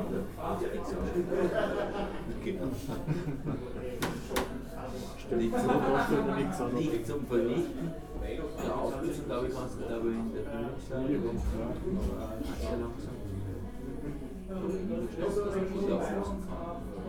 Linz, Österreich - schindler's heuriger
schindler's heuriger, lederergasse 15, 4020 linz
Linz, Austria, 7 January 2015, ~21:00